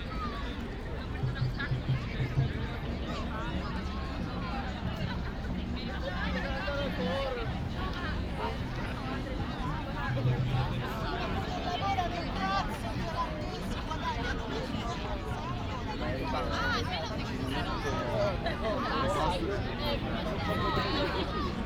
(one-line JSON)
{"title": "Ascolto il tuo cuore, città. I listen to your heart, city. Chapter X - Valentino Park at sunset soundwalk and soundscape 14 months later in the time of COVID19: soundwalk & soundscape", "date": "2021-05-07 20:16:00", "description": "\"Valentino Park at sunset soundwalk and soundscape 14 months later in the time of COVID19\": soundwalk & soundscape\nChapter CLXXI of Ascolto il tuo cuore, città. I listen to your heart, city\nFriday, May 7th, 2021. San Salvario district Turin, to Valentino park and back, one year and fifty-eight days after emergency disposition due to the epidemic of COVID19.\nStart at 8:16 p.m. end at 9:08 p.m. duration of recording 51’38”\nWalking to a bench on the riverside where I stayed for about 10’, from 6:35 to 6:45 waiting for sunset at 8:41.\nThe entire path is associated with a synchronized GPS track recorded in the (kmz, kml, gpx) files downloadable here:", "latitude": "45.05", "longitude": "7.69", "altitude": "229", "timezone": "Europe/Rome"}